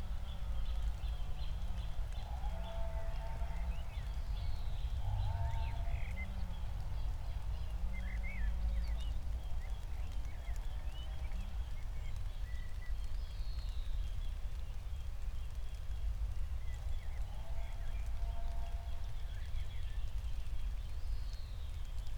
Berlin, Buch, Mittelbruch / Torfstich - wetland, nature reserve
11:00 Berlin, Buch, Mittelbruch / Torfstich 1
Deutschland, 19 June 2020